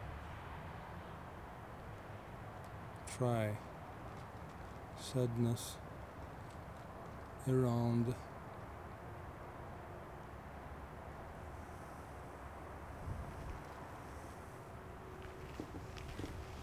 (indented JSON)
{
  "title": "Skoczów, Poland - meet my walk 01",
  "date": "2015-11-19 22:00:00",
  "description": "special recording by Wojciech Kucharczyk for the project with Carsten Stabenow for Art Meetings Festival, Kiev, 2015.\npart 01/04.\nzoom H2.",
  "latitude": "49.81",
  "longitude": "18.78",
  "altitude": "350",
  "timezone": "Europe/Warsaw"
}